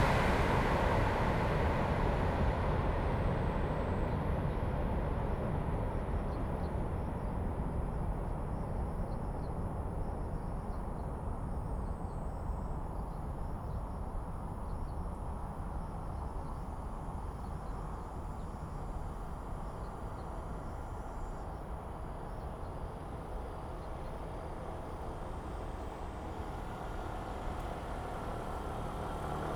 {"title": "金獅步道, 新竹縣湖口鄉 - traffic sound", "date": "2017-08-12 17:36:00", "description": "Near high-speed railroads, traffic sound, birds sound, Suona\nZoom H2n MS+XY", "latitude": "24.88", "longitude": "121.07", "altitude": "146", "timezone": "Asia/Taipei"}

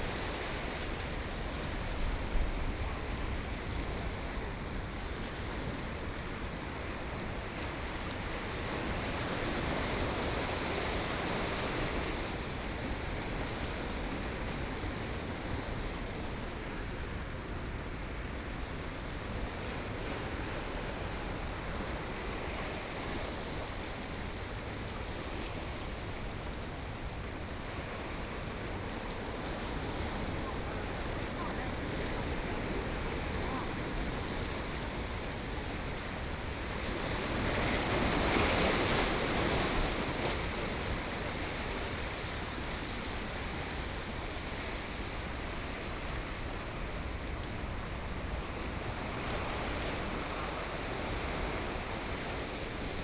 {"title": "頭城鎮大里里, Yilan County - On the coast", "date": "2014-07-21 16:49:00", "description": "On the coast, Sound of the waves, Very hot weather\nSony PCM D50+ Soundman OKM II", "latitude": "24.95", "longitude": "121.91", "altitude": "1", "timezone": "Asia/Taipei"}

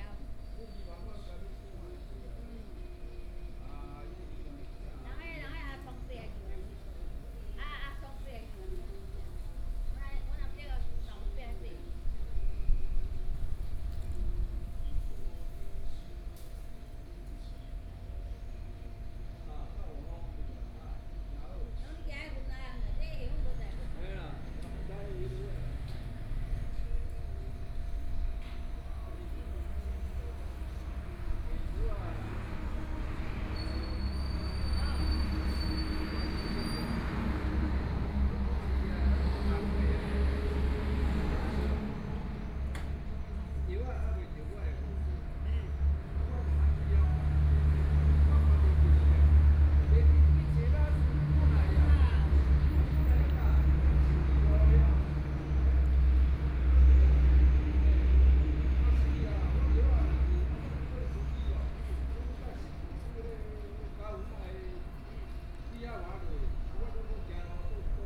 {"title": "Yongguang Rd., Su'ao Township - In the small park", "date": "2014-07-28 14:08:00", "description": "In the small park, Traffic Sound, Hot weather", "latitude": "24.59", "longitude": "121.85", "altitude": "11", "timezone": "Asia/Taipei"}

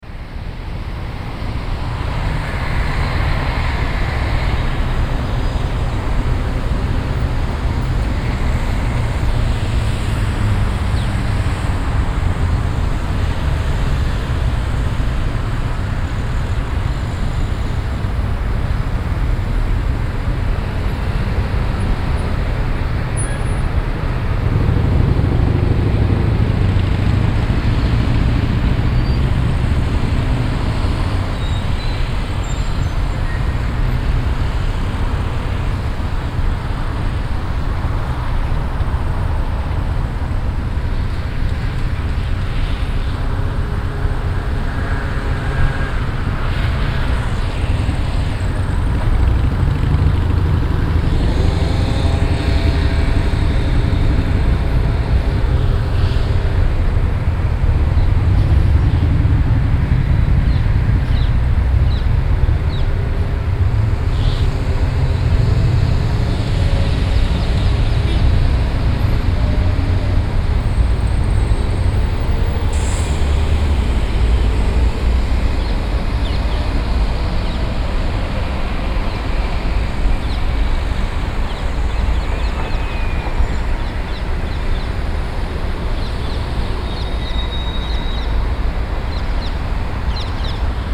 {"title": "Sevilla, Provinz Sevilla, Spanien - Sevilla - city soundsacpe from the hotel roof", "date": "2016-10-10 09:30:00", "description": "On the roof of a hotel - the city atmosphere in the morning time.\ninternational city sounds - topographic field recordings and social ambiences", "latitude": "37.40", "longitude": "-5.99", "altitude": "12", "timezone": "Europe/Madrid"}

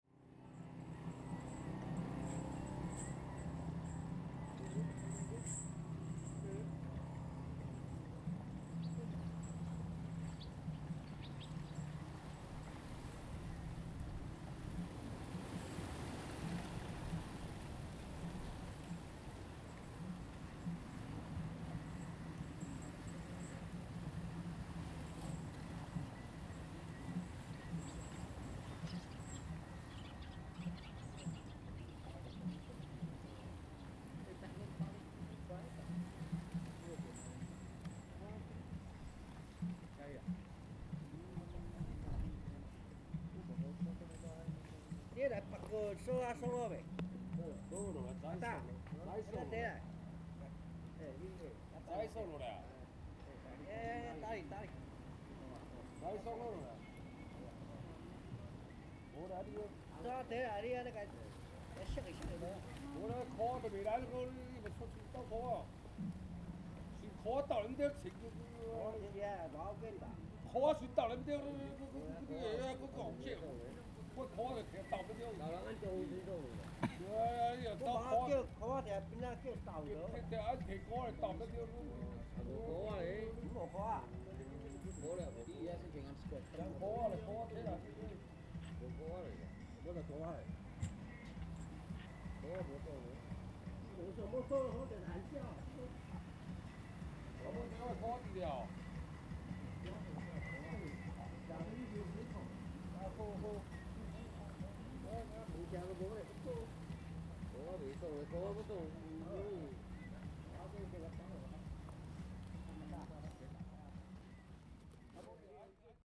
{"title": "Xiyu Township, Penghu County - In the fishing port pier", "date": "2014-10-22 16:49:00", "description": "In the fishing port pier\nZoom H2n MS+XY", "latitude": "23.57", "longitude": "119.50", "altitude": "4", "timezone": "Asia/Taipei"}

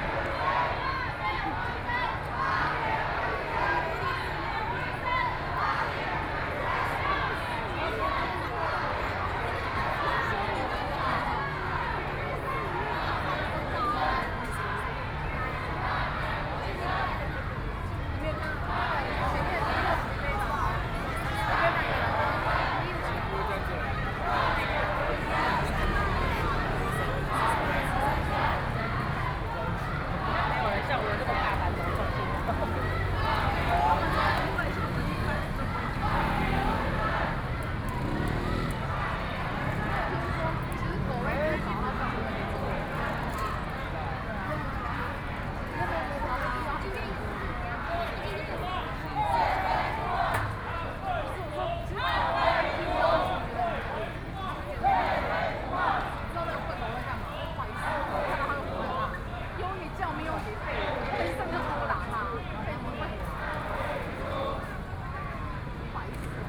{"title": "Zhongzheng Rd., Tamsui District - At the intersection", "date": "2014-04-05 17:38:00", "description": "Many tourists, The distance protests, Traffic Sound\nPlease turn up the volume a little. Binaural recordings, Sony PCM D100+ Soundman OKM II", "latitude": "25.17", "longitude": "121.44", "altitude": "16", "timezone": "Asia/Taipei"}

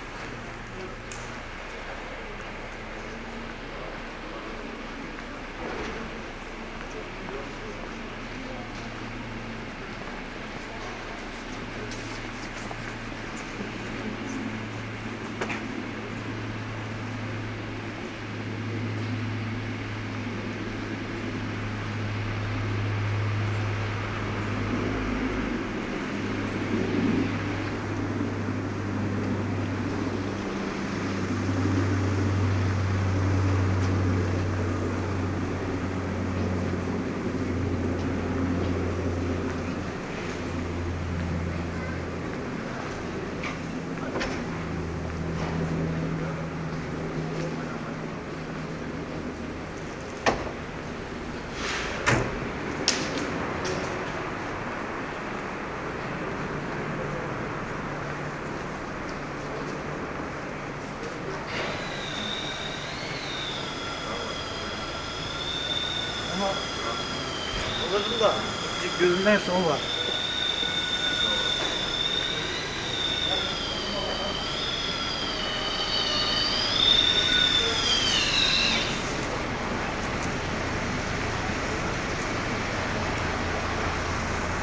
{"title": "Böckhstraße, Berlin, Deutschland - Soundwalk Böckhstrasse", "date": "2018-02-09 15:30:00", "description": "Soundwalk: Along Böckhstrasse until Schönleinstrasse\nFriday afternoon, sunny (0° - 3° degree)\nEntlang der Böckhstrasse bis Schönleinstrasse\nFreitag Nachmittag, sonnig (0° - 3° Grad)\nRecorder / Aufnahmegerät: Zoom H2n\nMikrophones: Soundman OKM II Klassik solo", "latitude": "52.49", "longitude": "13.42", "altitude": "37", "timezone": "Europe/Berlin"}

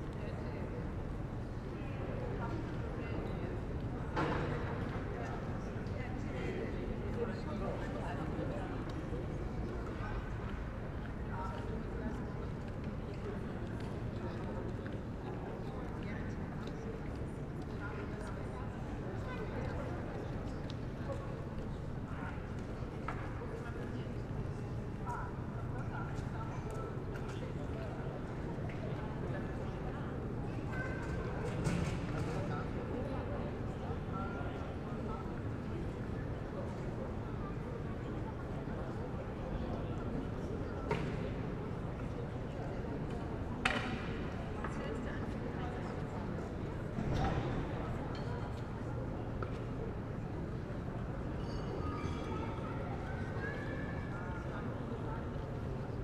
{"title": "Humboldt Forum / Stadtschloss, Berlin, Deutschland - inner yard ambience /w church bells of Berliner Dom", "date": "2021-07-18 18:40:00", "description": "soundscape within the inner yard of the new Berliner Stadtschloss, city castle. Churchbells of the cathedral opposite, sounds of the restaurant, among others, various reflections\n(Sony PCM D50, Primo EM272)", "latitude": "52.52", "longitude": "13.40", "altitude": "42", "timezone": "Europe/Berlin"}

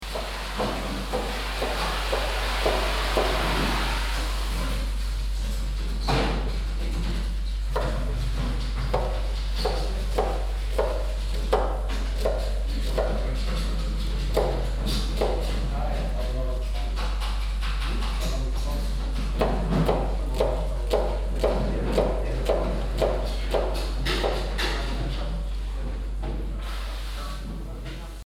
{"title": "Bastendorf, Tandel, Luxemburg - Bastendorf, bio nursery, cutting vegetables", "date": "2012-08-07 11:43:00", "description": "Innerhalb einer Arbeitshalle der Bio Gärtnerei \"am gärtchen\". Die Klänge von Menschen die Gemüse waschen und schneiden.\nInside a working hall of the bio nursery \"am gärtchen\". The sounds of people cutting and washing vegetables.", "latitude": "49.89", "longitude": "6.16", "altitude": "223", "timezone": "Europe/Luxembourg"}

